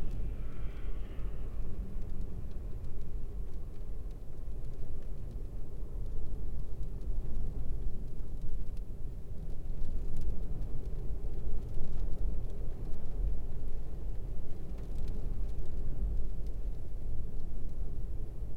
{"title": "In the car in the layby off the, Ipsden, Oxfordshire, UK - Layby with traffic, wind, rain on windscreen, and weather bass", "date": "2014-03-21 15:00:00", "description": "I have been exploring the soundscape of my commute and listening specifically in my car along my most regularly driven route - the A4074. This is the sound inside the car in the middle of a windy and rainy storm, with the wind buffeting the vehicle and the passing wash of the traffic. It's a bit low as I had the mics down quite low to cope with the hardcore rumble of the road.", "latitude": "51.55", "longitude": "-1.09", "altitude": "88", "timezone": "Europe/London"}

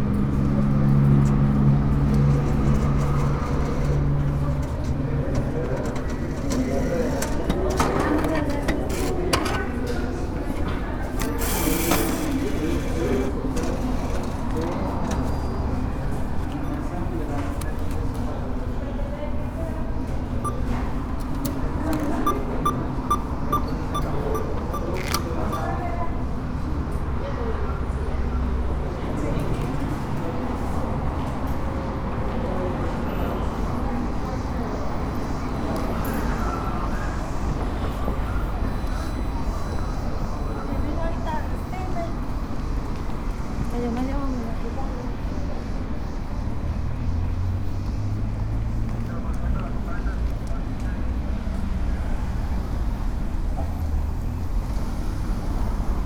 {"title": "Plaza Mayor, Centro Comercial, León, Gto., Mexico - En el cajero automático BBVA Bancomer de Plaza Mayor.", "date": "2020-03-06 12:41:00", "description": "Going to the mall ATM from the parking lot.\nI made this recording on March 6rd, 2020, at 12:41 p.m.\nI used a Tascam DR-05X with its built-in microphones and a Tascam WS-11 windshield.\nOriginal Recording:\nType: Stereo\nYendo al cajero automático del centro comercial Plaza Mayor desde el estacionamiento.\nEsta grabación la hice el 6 de marzo 2020 a las 12:41 horas.", "latitude": "21.16", "longitude": "-101.69", "altitude": "1827", "timezone": "America/Mexico_City"}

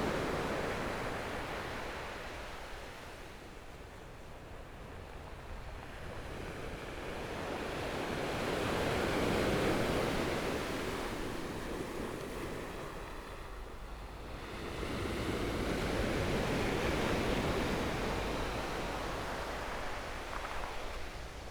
{"title": "花蓮市, Taiwan - Sound of the waves", "date": "2014-02-24 13:48:00", "description": "Sound of the waves\nBinaural recordings\nZoom H4n+ Soundman OKM II + Rode NT4", "latitude": "23.98", "longitude": "121.62", "timezone": "Asia/Taipei"}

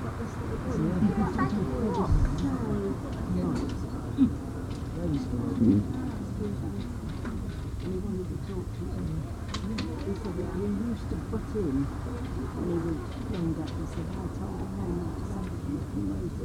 {"title": "lunch at cove, Portland", "date": "2011-04-20 16:07:00", "description": "Sounds of the seaside", "latitude": "50.56", "longitude": "-2.45", "timezone": "Europe/London"}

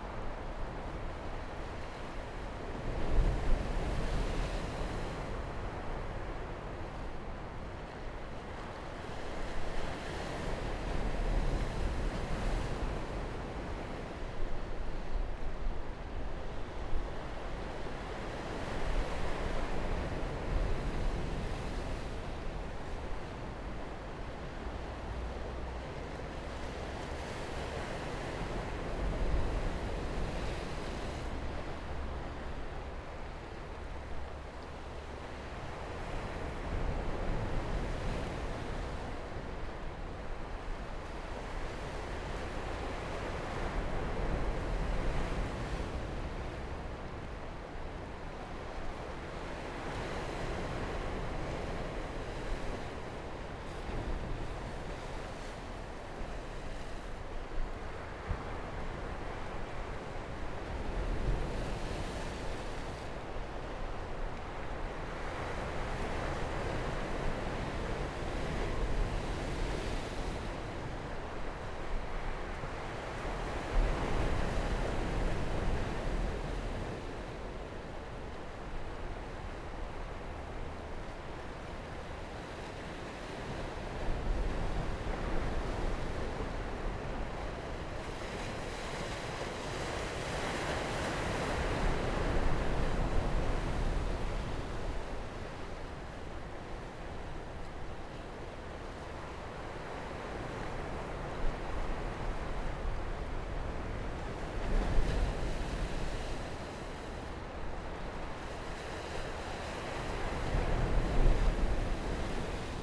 {"title": "Hualien, Taiwan - Sound of the waves", "date": "2013-11-05 13:16:00", "description": "Sound of the waves, Cloudy day, Zoom H4n +Rode NT4+ Soundman OKM II", "latitude": "23.97", "longitude": "121.61", "altitude": "7", "timezone": "Asia/Taipei"}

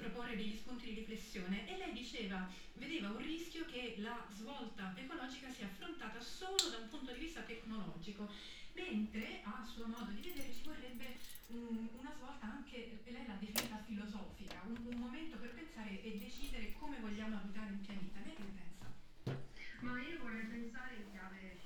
{"title": "Ascolto il tuo cuore, città. I listen to your heart, city. Several chapters **SCROLL DOWN FOR ALL RECORDINGS** - “Outdoor market in the square one year later at the time of covid19”: Soundwalk", "date": "2021-04-23 11:27:00", "description": "“Outdoor market in the square one year later at the time of covid19”: Soundwalk\nChapter CLXIX of Ascolto il tuo cuore, città. I listen to your heart, city.\nFriday, April 23rd 2021. Shopping in the open air square market at Piazza Madama Cristina, district of San Salvario, Turin, one year after the same walk on the same date in 2020 (54-Outdoor market in the square); one year and forty-four days after emergency disposition due to the epidemic of COVID19.\nStart at 11:27 a.m., end at h. 11: 43 a.m. duration of recording 16’23”\nThe entire path is associated with a synchronized GPS track recorded in the (kml, gpx, kmz) files downloadable here:", "latitude": "45.06", "longitude": "7.68", "altitude": "245", "timezone": "Europe/Rome"}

